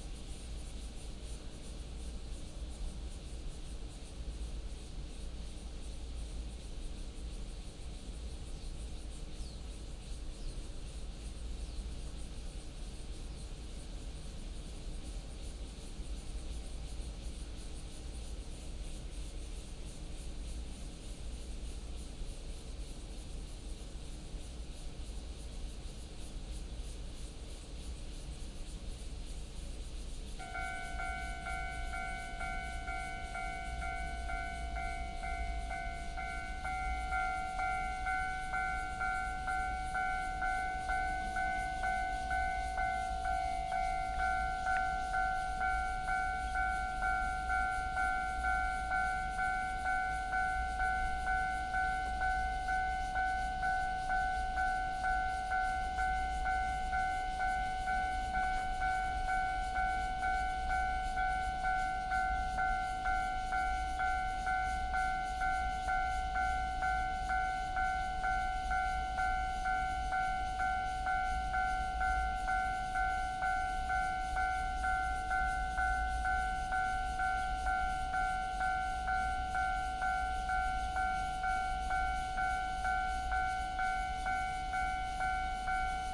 Next to the tracks, Factory sound, Cicada cry, Traffic sound, The train runs through, Railroad Crossing